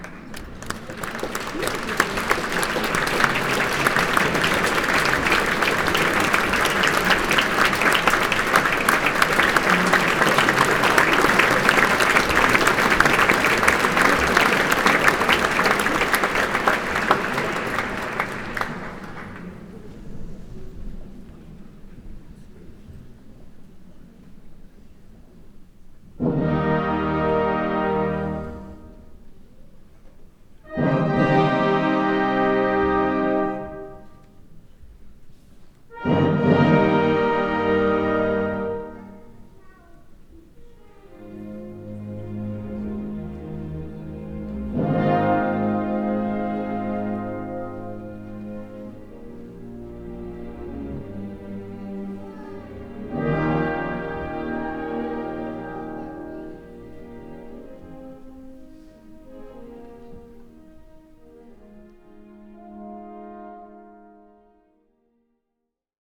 Bebelpl., Berlin, Allemagne - Attending the Opera
Die Zauberflöte at the Staatsoper, Berlin. Attendance ambiance, orchestra tuning, crowd clapping and first bars of overture.
Recorded with Roland R-07 + Roland CS-10EM (binaural in-ear microphones)
Deutschland